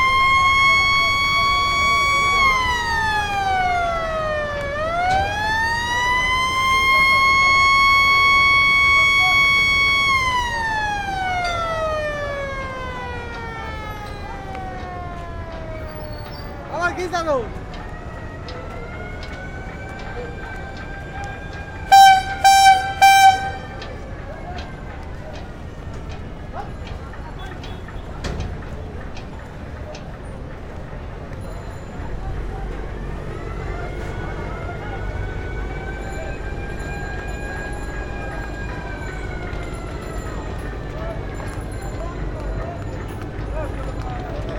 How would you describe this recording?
Sadarghat launch terminal is a very busy port. You get launches to go to many directions from Dhaka from this port. It is always busy, always full of people and always full of boats and vessels.